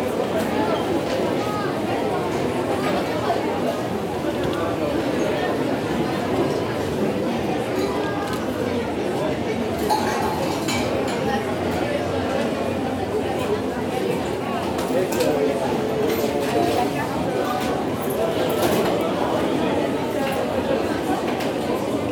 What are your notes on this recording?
A large shopping mall during a long walk. Make emerge a soothing sound from a huge commercial mall may seem like an antithesis. Indeed, the atmosphere can be particularly stressful. However, listening without being drowned into the busy place causes a sensation of calm. It's relaxing. After a while, we don't listen ; we hear, we are there but without being there. That's why I had chosen one of the worst dates possible : just a week before Christmas on a busy Saturday afternoon. These shops are so crowded that we are in a kind of wave, an hubbub, a flow. Discussions become indistinct. In reality excerpt a few fragments that startle in this density, we have an impression of drowning.